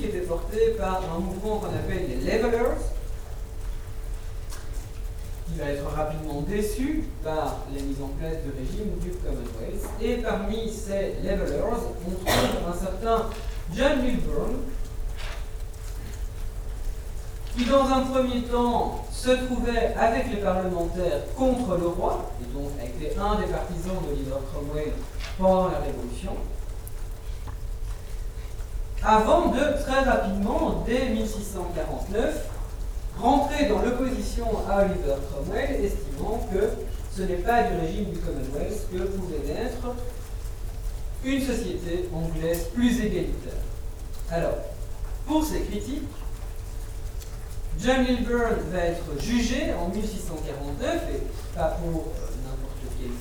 Centre, Ottignies-Louvain-la-Neuve, Belgique - A course of antic history
A course of antic history, in the Agora auditoire.
Ottignies-Louvain-la-Neuve, Belgium